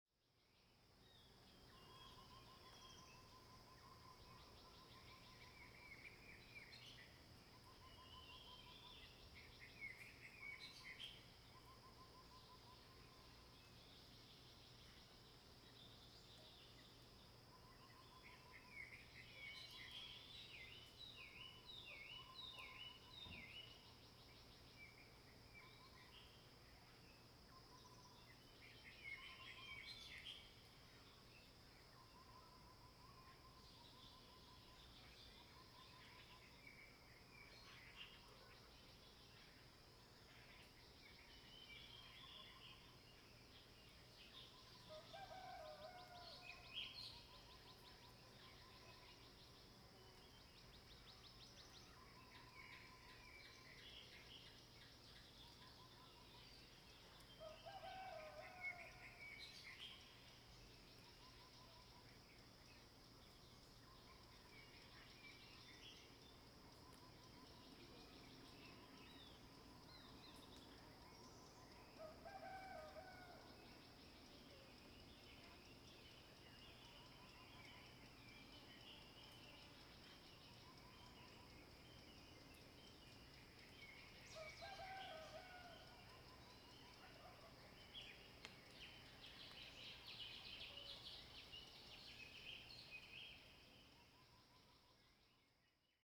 Bird calls, Early morning, Crowing sounds
Zoom H2n MS+XY